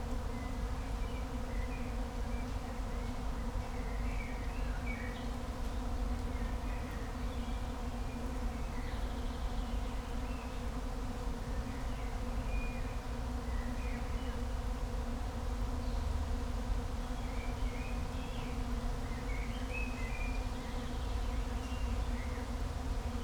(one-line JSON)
{"title": "Königsheide, Berlin, Deutschland - humming trees", "date": "2020-06-27 15:45:00", "description": "intense and amazing humming in the trees, probably caused by bees, must be thousands, couldn't see them though.\n(Sony PCM D50, Primo EM172)", "latitude": "52.45", "longitude": "13.49", "altitude": "37", "timezone": "Europe/Berlin"}